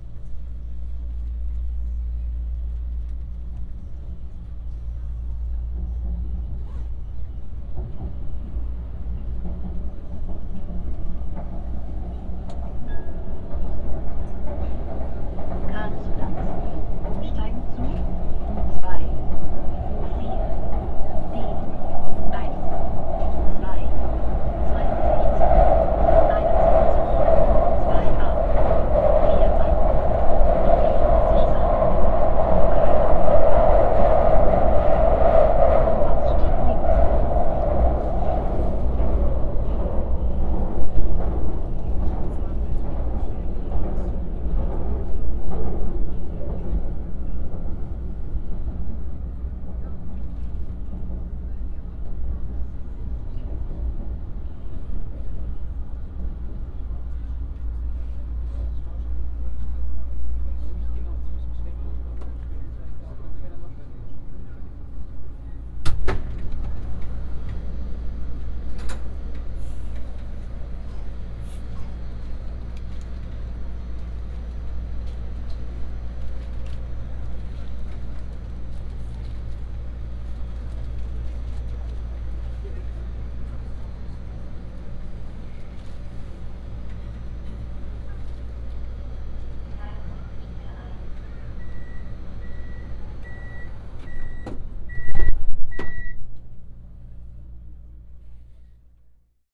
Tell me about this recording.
Metro ride from Hauptbahnhof to Schottenring. recorded with Soundman OKM + Sony D100, sound posted by Katarzyna Trzeciak